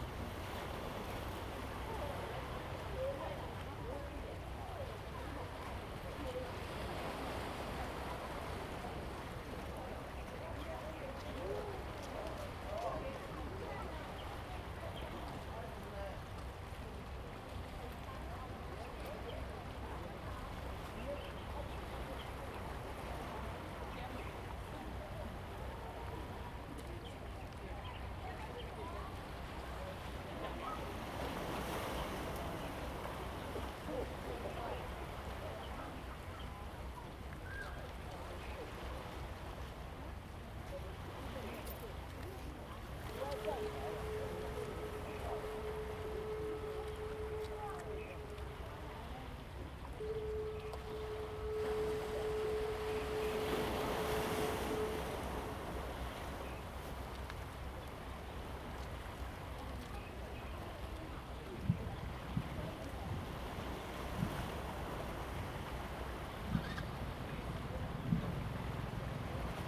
Liuqiu Township, Pingtung County - In front of the temple
In the square in front of the temple, Tourist, Sound of waves and tides
Zoom H2n MS +XY
Pingtung County, Taiwan, 1 November 2014